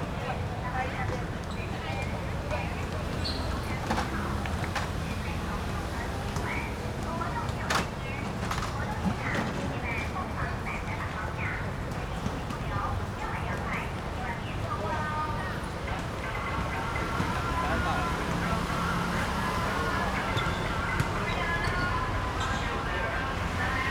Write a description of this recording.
Broadcasting vans, Next to the street, Play basketball, Market consolidation sounds, Rode NT4+Zoom H4n